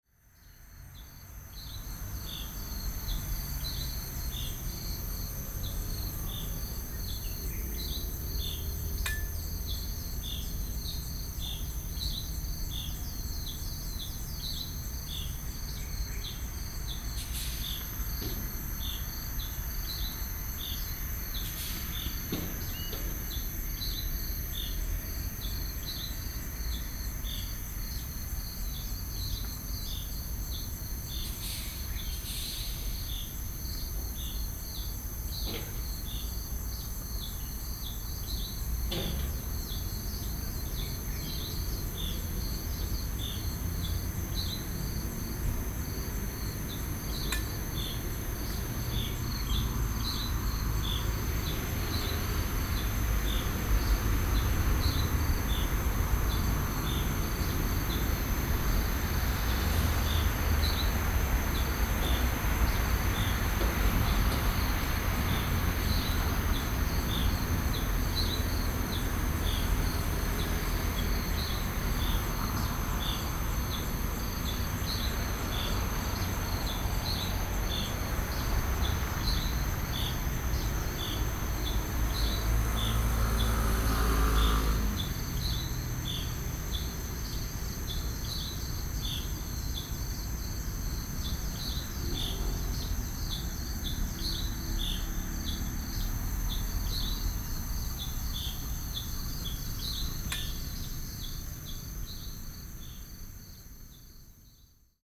Beitou, Taipei - Early in the morning
Early in the morning, Sony PCM D50 + Soundman OKM II
北投區, 台北市 (Taipei City), 中華民國, 23 June 2012, 04:34